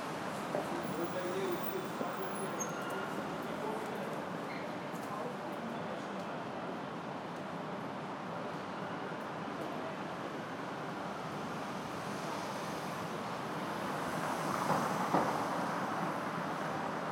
Post Office near Market Street. - Alleyway Pulse
Recorded on an Alleyway jsut next to a Post Office in Manchester Town Centre.
16 September 2010, 18:05